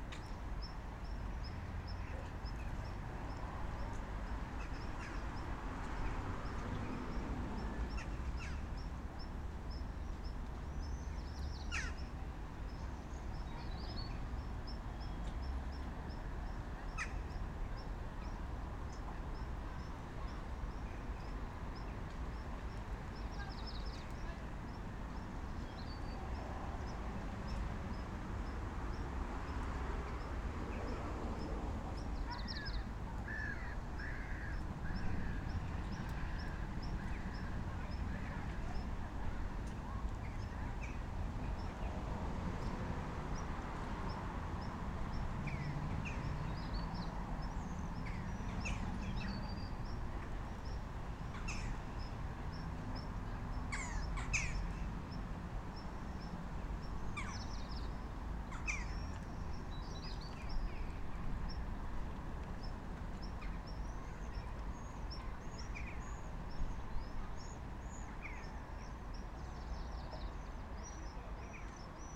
Kaliningrad, Russia, standing at submarine
Museum of Oceans, standing at russian submarine
Kaliningrad, Kaliningradskaya oblast, Russia, 8 June 2019, 19:25